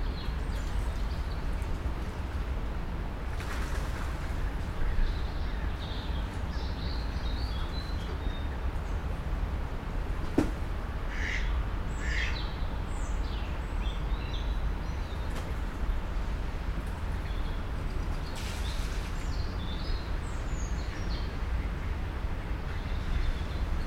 Location: Wellness city of Bad Berka, Thuringia State, Germany.
*Binaural sound is intended for playback on headphones so please use one for spatial immersion.